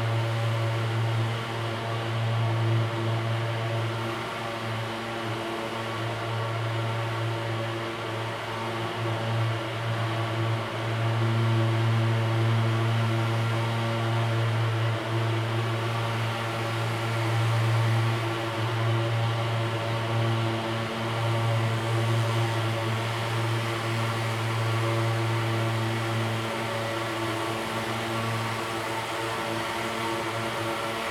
Sprayed with disinfectant, Disused railway factory
Zoom H2n MS + XY